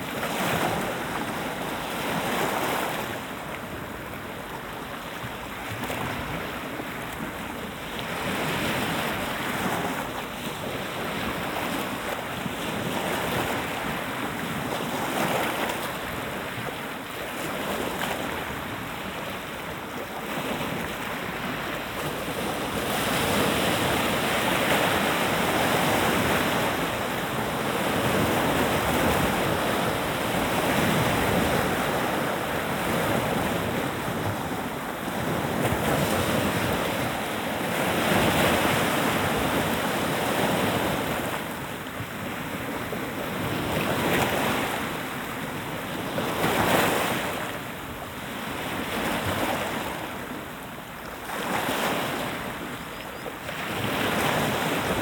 Cape Zmeinyy. Play of the waves.
Мыс Змеиный. Плеск волн.
Arkhangelskaya oblast', Russia